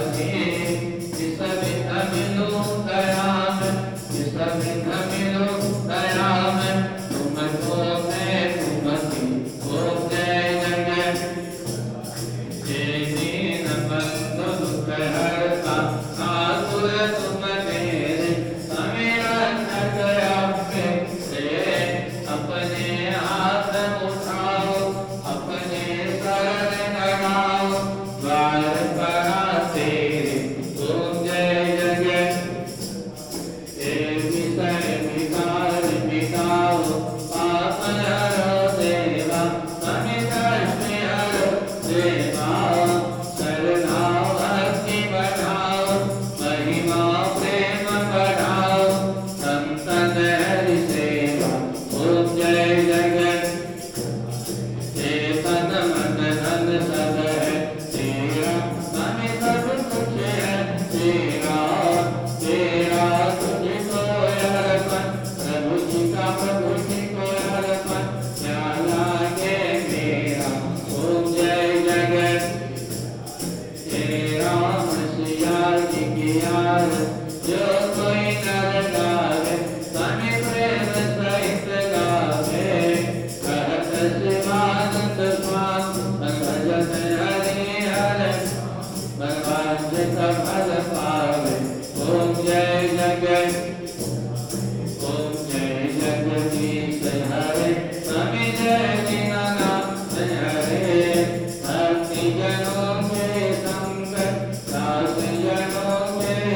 Khirki, New Delhi, Delhi, India - Religious ceremony music at Sai Baba temple in Khirki
Recording of religious ceremony music at one of the near-by temples - one of the thousands in Delhi...